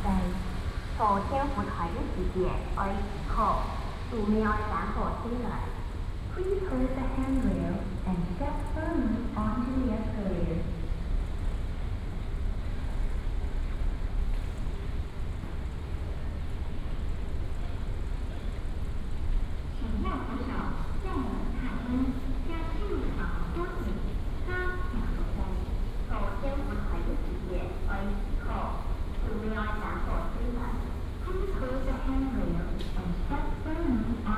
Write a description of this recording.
Walking at the station, Binaural recordings, Sony PCM D100+ Soundman OKM II